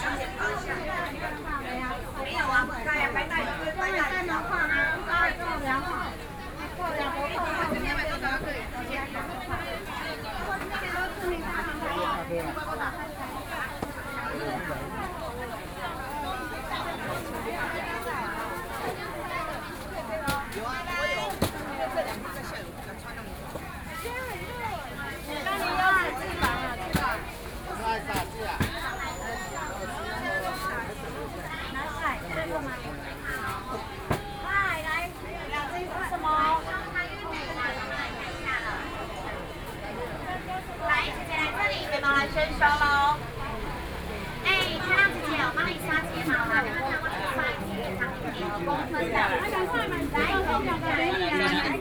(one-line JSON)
{"title": "中山區集英里, Taipei City - traditional market", "date": "2014-04-27 11:24:00", "description": "Walking through the traditional market, Traffic Sound\nSony PCM D50+ Soundman OKM II", "latitude": "25.06", "longitude": "121.52", "altitude": "8", "timezone": "Asia/Taipei"}